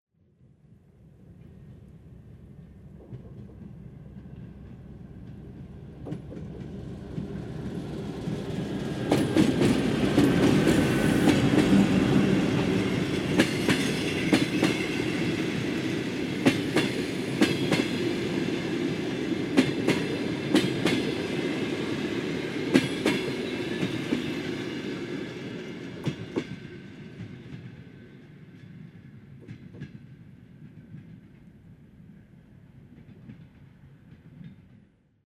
Russia, Severodvinsk - passing train
проезд поезда Северодвинск - Ненокса.
Passing trains Severodvinsk - Nenoksa. Recorded on Zoom H4n.